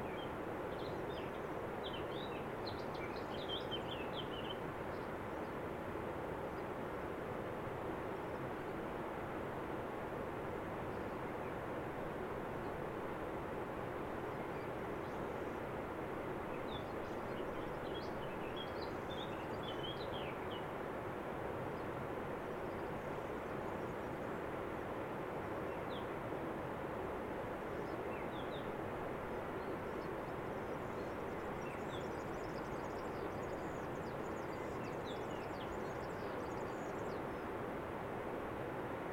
Aussenaufnahme. Lautes Rauschen der Trisanna; Vogelstimmen
Kappl, Austria, 2 June 2019, ~13:00